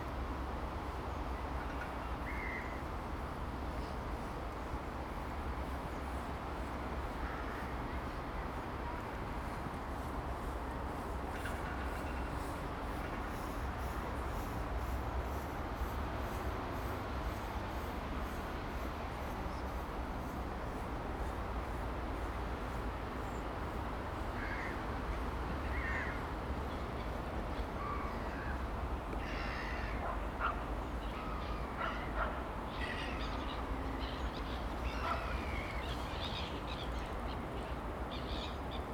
Contención Island Day 37 outer south - Walking to the sounds of Contención Island Day 37 Wednesday February 10th
The Poplars The High Street The Great North Road
Walkers
runners
cyclists
in the snow
Gulls stand on the frozen lake
to lift
and move
to the prospect of food
Carefully balancing his cappuccino
a young man squats
to heel the lake ice
England, United Kingdom